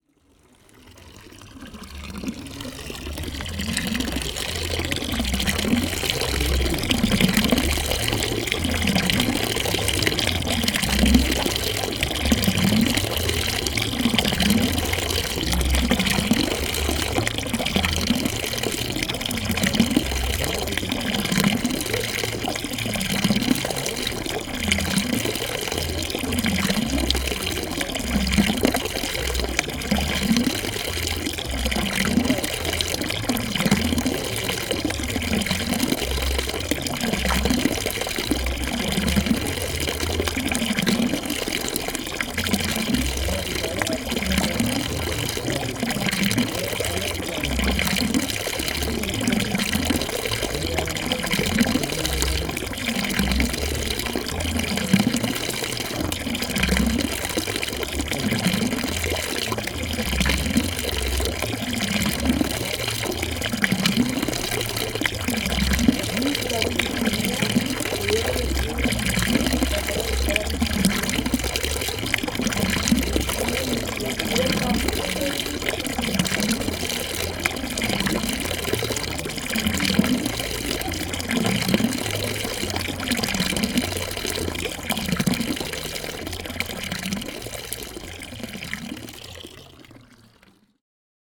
Florac, Traverse du Fourniol, the fountain
Florac, France, 14 July 2011, 19:53